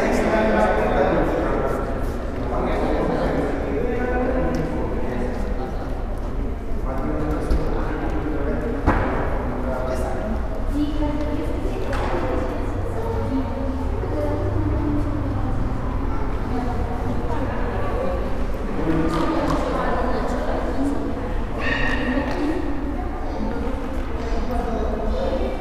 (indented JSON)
{
  "title": "Blvrd Jose María Morelos, Granjas el Palote, León, Gto., Mexico - Agencia de carros BMW Euromotors León.",
  "date": "2022-04-23 14:22:00",
  "description": "Car agency BMW Euromotors León.\nI made this recording on april 23rd, 2022, at 2:22 p.m.\nI used a Tascam DR-05X with its built-in microphones and a Tascam WS-11 windshield.\nOriginal Recording:\nType: Stereo\nEsta grabación la hice el 23 de abril de 2022 a las 14:22 horas.",
  "latitude": "21.17",
  "longitude": "-101.69",
  "altitude": "1833",
  "timezone": "America/Mexico_City"
}